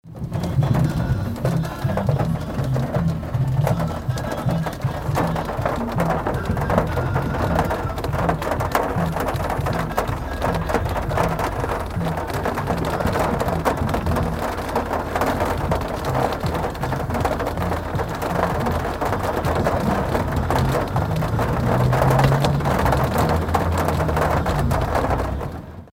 cologne, taxi in the rain - Köln, taxi in the rain
taxi standing under tree, heavy rain drops, music inside the car.
recorded july 3rd, 2008.
project: "hasenbrot - a private sound diary"
Cologne, Germany